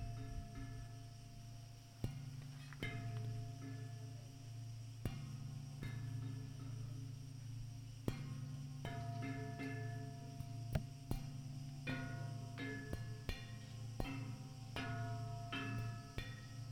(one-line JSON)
{"title": "Epar.Od. Mourtzanas-Anogion, Garazo, Greece - Metallic door playing", "date": "2017-08-12 14:52:00", "description": "Playing with the dynamics of a metallic door.", "latitude": "35.35", "longitude": "24.79", "altitude": "252", "timezone": "Europe/Athens"}